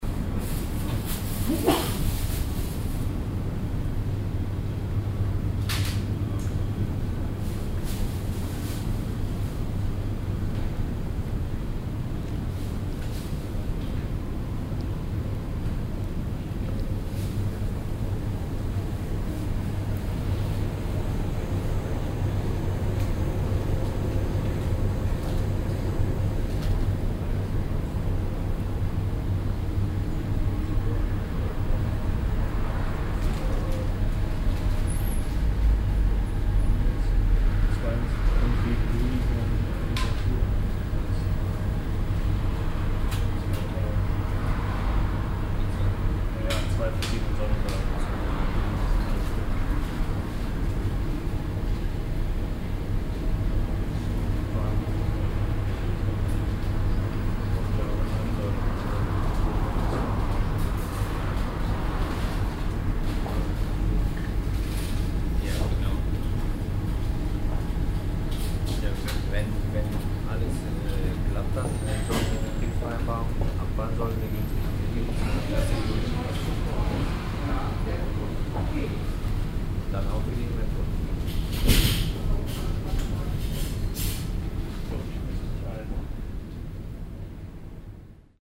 {
  "title": "cologne, venloerstrasse, biosupermarkt, morgens",
  "date": "2008-05-08 21:01:00",
  "description": "stereofeldaufnahmen im mai 08 - nachmittags\nproject: klang raum garten/ sound in public spaces - indoor nearfield recordings",
  "latitude": "50.94",
  "longitude": "6.93",
  "altitude": "51",
  "timezone": "Europe/Berlin"
}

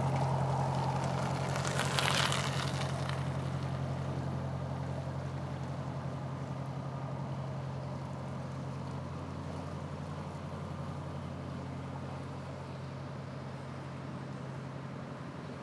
{
  "title": "Sales Canal",
  "date": "2010-09-22 17:33:00",
  "description": "Boat, canal, bicycle",
  "latitude": "53.42",
  "longitude": "-2.32",
  "altitude": "30",
  "timezone": "Europe/London"
}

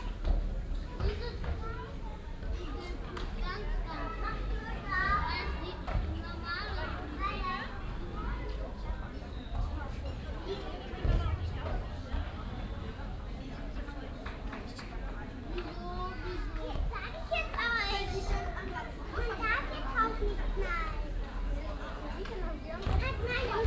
{
  "title": "cologne, melchiorstrasse, alte feuerwache, innenhof, kita",
  "date": "2008-08-02 00:23:00",
  "description": "mittags auf dem innenhof, fussballspielende kinder, geschirrklappern und gespräche der gastronomiegäste, kleinkinder an den spielgeräten\nsoundmap nrw:\ntopographic field recordings, social ambiences",
  "latitude": "50.95",
  "longitude": "6.95",
  "altitude": "56",
  "timezone": "Europe/Berlin"
}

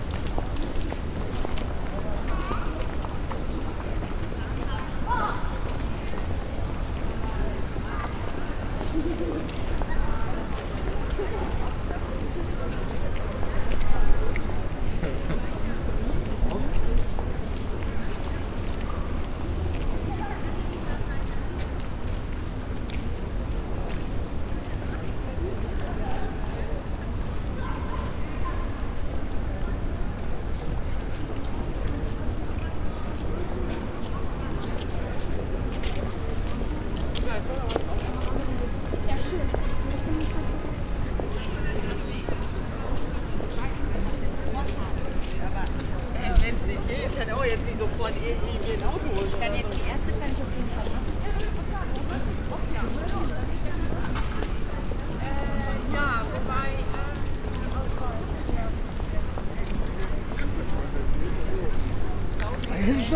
{
  "title": "dortmund, ostenhellweg, city shopping zone",
  "date": "2010-04-29 10:23:00",
  "description": "in the city shopping zone at noon, pedestrians passing by on the hard stone pavement\nsoundmap nrw - social ambiences and topographic field recordings",
  "latitude": "51.51",
  "longitude": "7.47",
  "altitude": "94",
  "timezone": "Europe/Berlin"
}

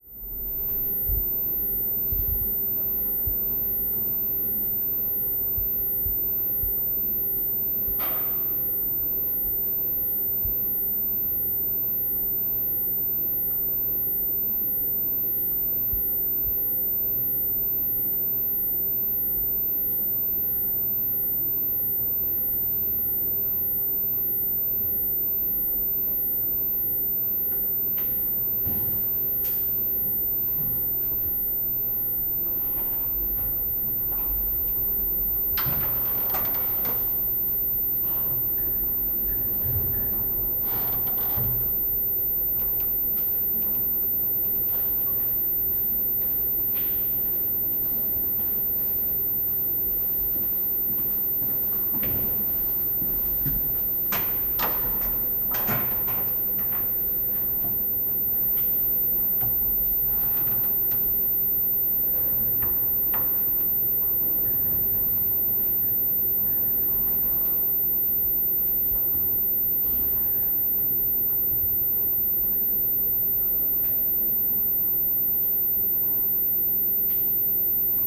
{
  "title": "Arne Nováka, Brno-střed-Veveří, Česko - Radio-frequency EAS Systems, Central Library, Faculty of Arts MU",
  "date": "2015-10-15 16:00:00",
  "description": "Recorded on Zoom H4n + Sennheiser MKH416 + Rode NTG 1 (binaural), 15.10.2015.",
  "latitude": "49.20",
  "longitude": "16.60",
  "altitude": "238",
  "timezone": "Europe/Prague"
}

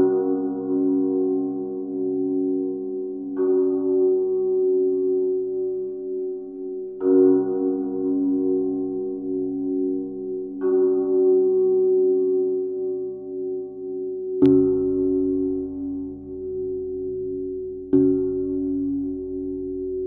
{
  "title": "Maintenon, France - Barrier",
  "date": "2017-08-10 19:10:00",
  "description": "Playing with a new metallic barrier surrounding the college school. I noticed these huge steel bars would be perfect to constitude a gigantic semantron. So I tried different parts. Recorded with a contact microphone sticked to the bars.",
  "latitude": "48.59",
  "longitude": "1.59",
  "altitude": "133",
  "timezone": "Europe/Paris"
}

